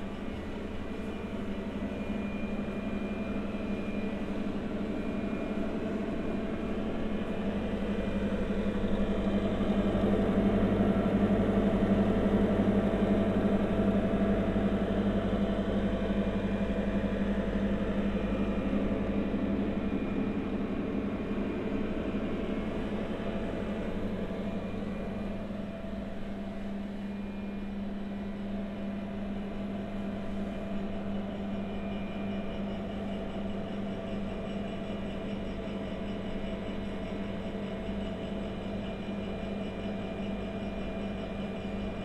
Funkhaus Nalepastr., Berlin - fridge
Funkhau Nalepastr., sound of a fridge, room ambience.
(SD702, Audio Technica BP4025)